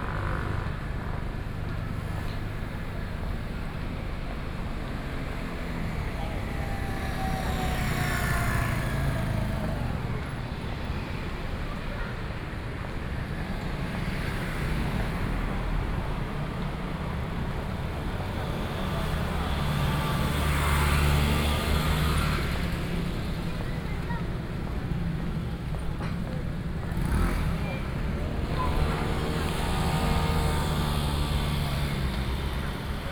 Zhuwei, New Taipei City - walking in the Street
Traffic Noise, Garbage truck arrived at the sound, People walking in the street, Various businesses voices, Binaural recordings, Sony PCM D50 + Soundman OKM II
26 October 2013, 19:20